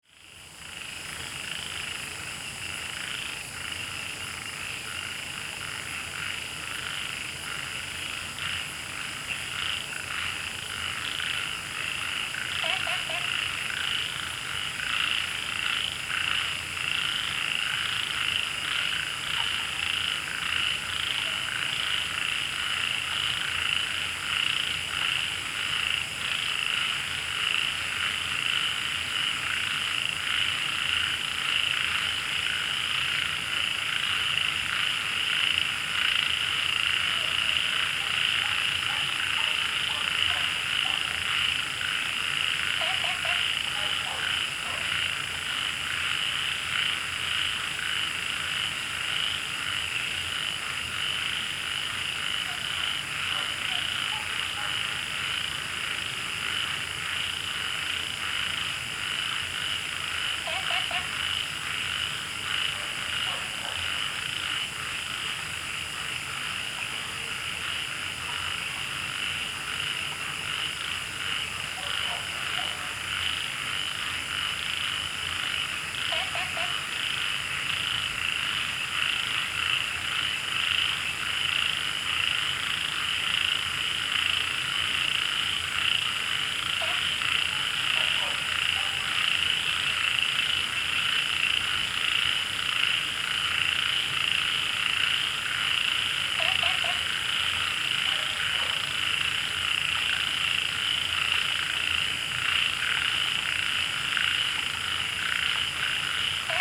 茅埔坑溼地, 南投縣埔里鎮桃米里 - Frogs chirping
Frogs chirping, Insects sounds, Wetland
Zoom H2n MS+ XY